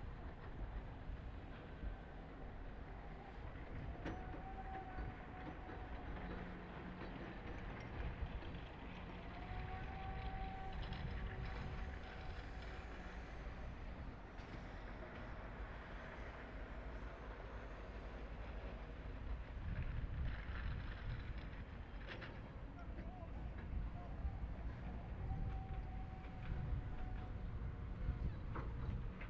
Wasted Sounds of an old harbor that is transformed into a residential area.